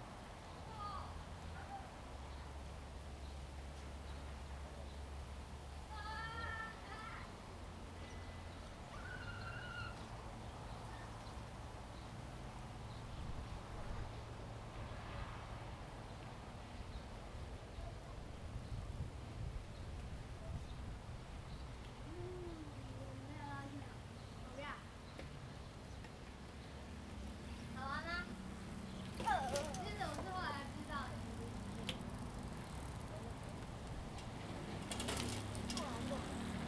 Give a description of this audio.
Small village, wind, Casuarina trees, birds sound, dog sound, Zoom H2n MS +XY